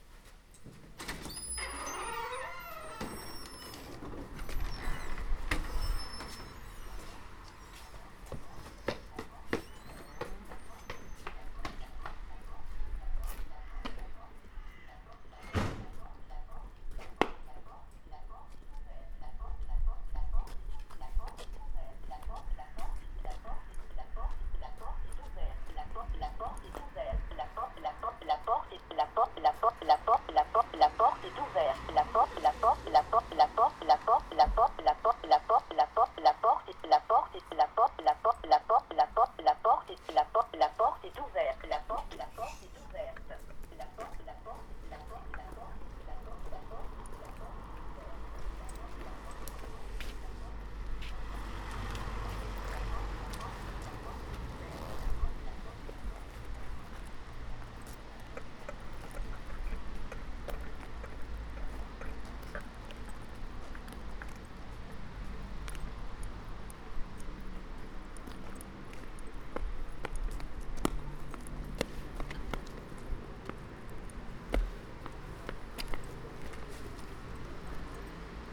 Schiltigheim, France - the schoolyard entrance
Recorded by the children of Mermoz School in Strasbourg, the door is open and a voice keep on saying : the door is open ... kind of a glitch situation.